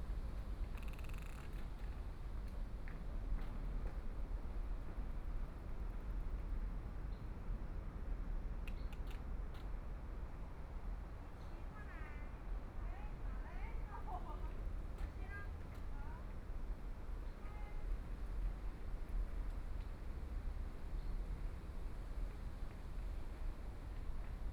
Taitung City, Taiwan - winds
Sound produced by the wind branches, Horsetail Tree, The distant sound of the waves, Dialogue among the tourists, Binaural recordings, Zoom H4n+ Soundman OKM II ( SoundMap2014016 -12)
Taitung County, Taiwan